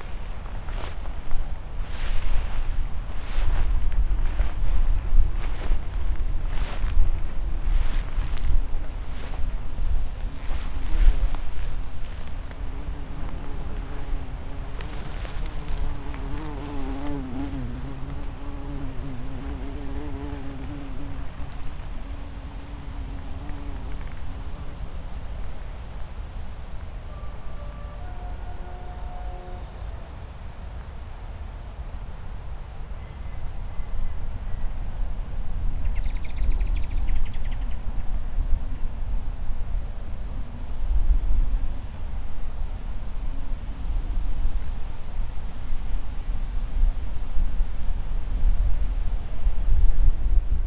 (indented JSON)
{
  "title": "path of seasons, meadow, piramida - april winds, crickets, train, drony",
  "date": "2014-04-14 15:18:00",
  "latitude": "46.57",
  "longitude": "15.65",
  "altitude": "363",
  "timezone": "Europe/Ljubljana"
}